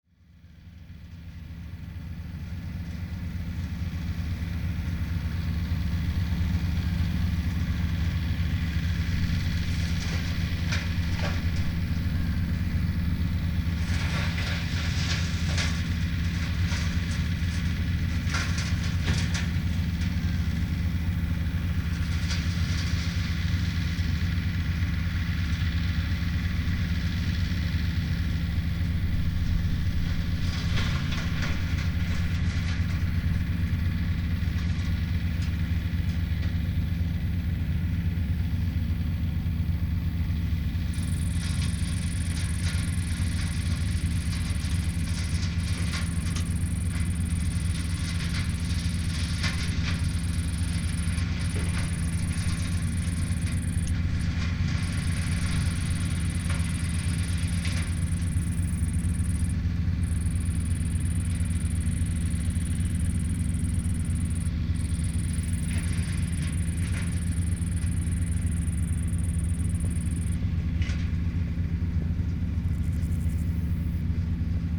Utena, tractor and grasshopper
tractor chopping fallen brushes and grasshopper singing in the grass